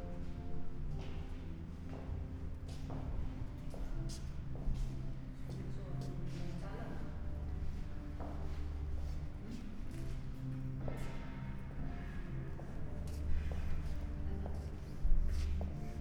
Altes Gymnasium, Oldenburg, Deutschland - enry hall ambience
Altes Gymnasium Oldenburg, entry hall, ambience, sounds of a reheasal from the concert hall above
(Sony PCM D50, Primo EM172)